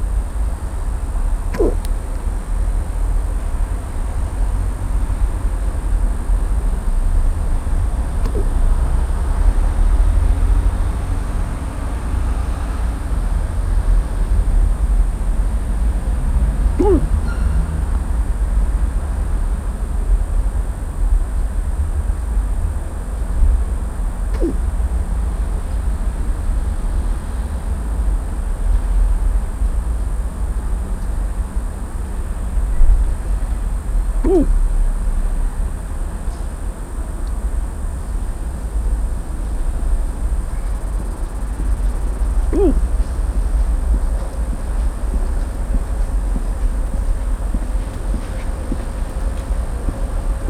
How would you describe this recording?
Back from a bar, drank a few beers and Ive got hiccups. The streetlight makes me think of an insect. PCM-M10, internal microphones.